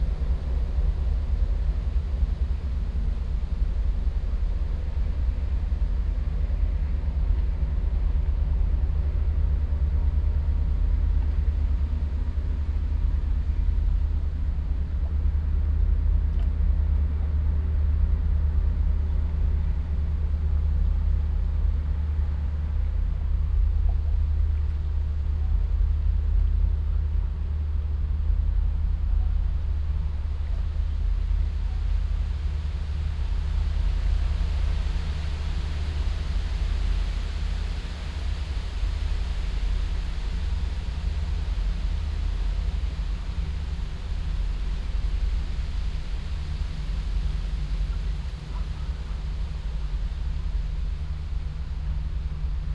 Kiel Canal Exit, Kiel, Deutschland - Kiel Canal Exit
Exit of the Kiel Canal in Kiel, a passing ship, wind, rustling leaves, small splashing waves, constant low frequency rumble from ship engines, a ship horn (@4:40), gulls, geese and some oystercatcher (@13:10) Binaural recording, Zoom F4 recorder, Soundman OKM II Klassik microphone with wind protection